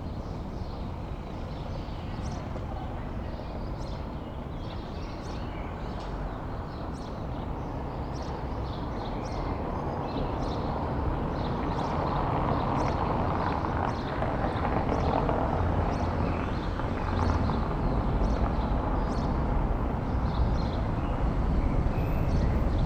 Berlin: Vermessungspunkt Friedel- / Pflügerstraße - Klangvermessung Kreuzkölln ::: 28.04.2011 ::: 09:45